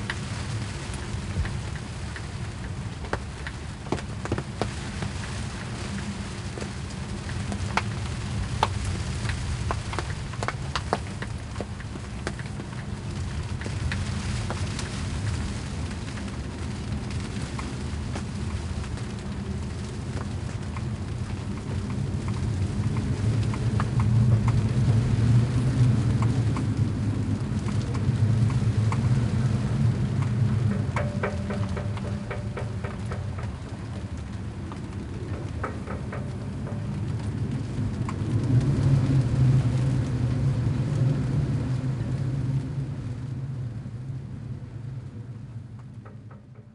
{"title": "Isleornsay, Skye, Scotland, UK - Waiting Out a Storm: Anchored (Part 1)", "date": "2019-06-30 18:24:00", "description": "Recorded with a stereo pair of DPA 4060s into a SoundDevices MixPre-3.", "latitude": "57.15", "longitude": "-5.80", "altitude": "1", "timezone": "Europe/London"}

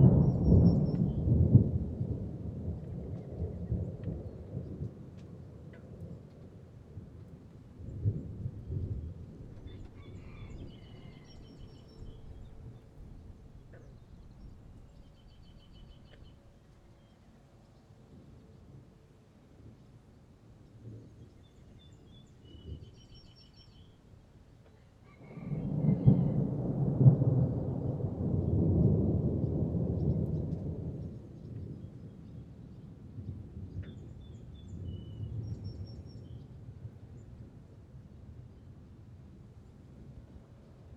{"title": "Whitehill, Nova Scotia, Canada", "date": "2010-07-18 15:40:00", "description": "A summer thunder storm passes through rural Pictou County Nova Scotia.", "latitude": "45.49", "longitude": "-62.76", "altitude": "132", "timezone": "America/Halifax"}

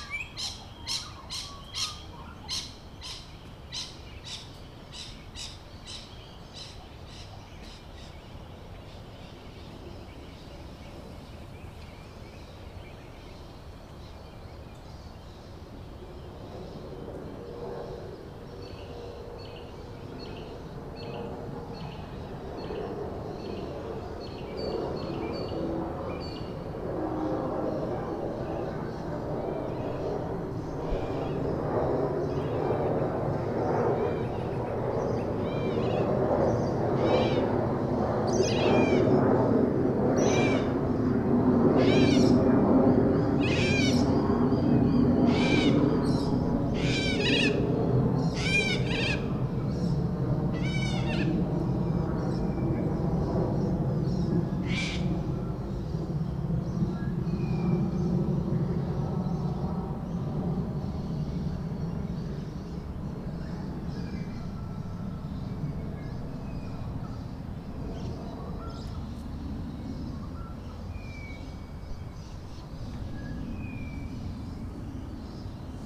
{"title": "Enoggera, Brisbane. - Birds awake before the city .", "date": "2010-07-09 06:35:00", "description": "Early winter morning, Birds awake before the city .( Neil Mad )", "latitude": "-27.42", "longitude": "153.00", "altitude": "33", "timezone": "Australia/Brisbane"}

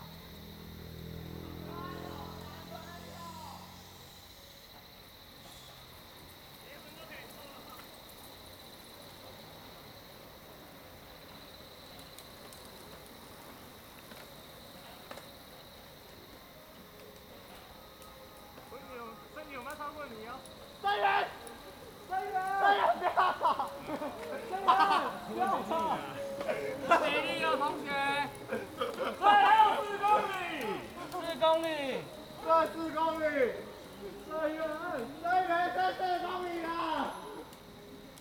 {"title": "牡丹鄉199縣道4K, Mudan Township - Mountain road", "date": "2018-04-02 10:42:00", "description": "Mountain road, Cicada sounds, Bicycle Society, Bird call, The voice of a distant aircraft\nZoom H2n MS+XY", "latitude": "22.24", "longitude": "120.86", "altitude": "403", "timezone": "Asia/Taipei"}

